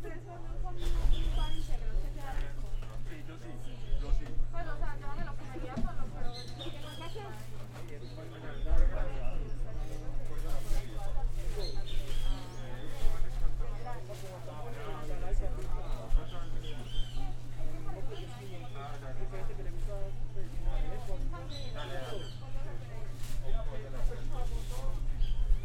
Cra., Medellín, Antioquia, Colombia - Kiosco Comunicación Universidad de Medellín
Conversaciones en el kiosco de comunicación en un día soleado.
Sonido tónico: Personas conversando y pájaros cantando.
Señal sonora: Botella de vidrio, puerta de microondas.
Se grabó con una zoom H6, con micrófono XY.
Tatiana Flórez Ríos - Tatiana Martínez Ospino - Vanessa Zapata Zapata